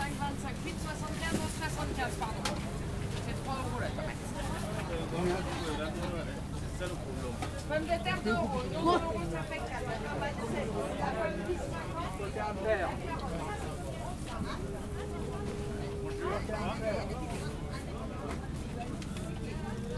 Belleville, Paris, France - Soundwalk through Pere-Lachaise Market
Soundwalk through Pere-Lachaise Market at Ménilmontant, Paris.
Zoom H4n